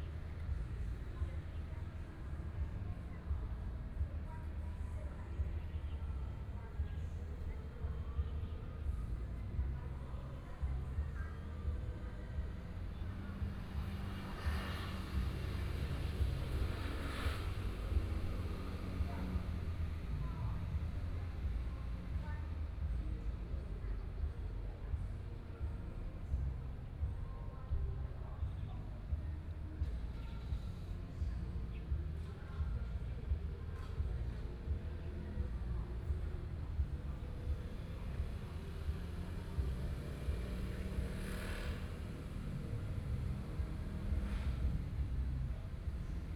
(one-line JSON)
{"title": "Gongyuan Rd., Hualien City - Community center", "date": "2013-11-05 14:36:00", "description": "in the Community activity center for the elderlyAfternoon at the community center of the square, Sony PCM D50 + Soundman OKM II", "latitude": "23.98", "longitude": "121.61", "altitude": "24", "timezone": "Asia/Taipei"}